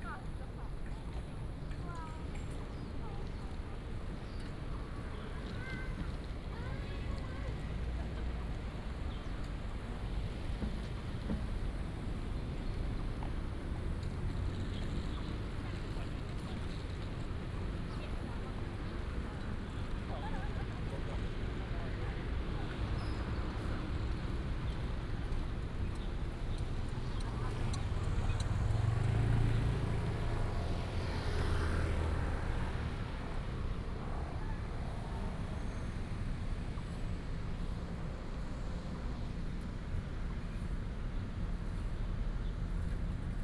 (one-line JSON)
{"title": "臺灣大學, Zhoushan Rd., Da’an Dist., Taipei City - Follow front trunk", "date": "2015-07-28 17:11:00", "description": "From the MRT station, Starting from the main road, walking into college, Walking across the entire campus", "latitude": "25.02", "longitude": "121.54", "altitude": "20", "timezone": "Asia/Taipei"}